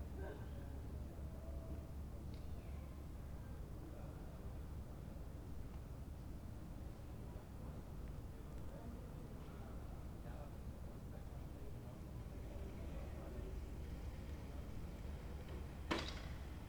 Berlin: Vermessungspunkt Friedelstraße / Maybachufer - Klangvermessung Kreuzkölln ::: 12.08.2010 ::: 03:23